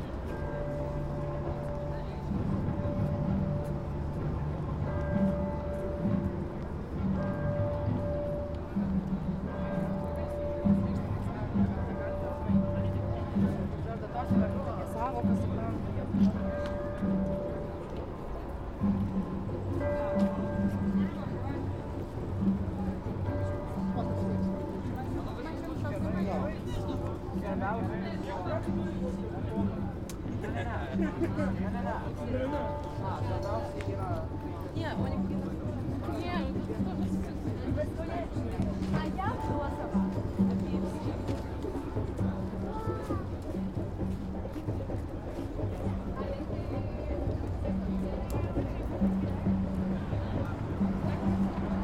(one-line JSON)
{"title": "Vilnius, Lithuania, a walk - street music day", "date": "2020-07-18 17:30:00", "description": "Street Music Day - yearly celebration in Lithuania. strange, apocalyptically sounding, mixture of street musicians with cathedral bells", "latitude": "54.69", "longitude": "25.28", "altitude": "99", "timezone": "Europe/Vilnius"}